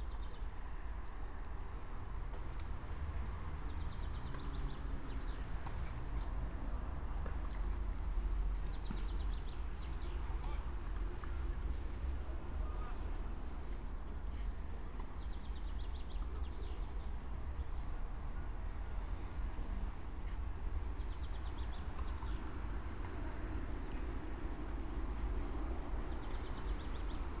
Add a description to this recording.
08:23 Brno, Lužánky, (remote microphone: AOM5024/ IQAudio/ RasPi2)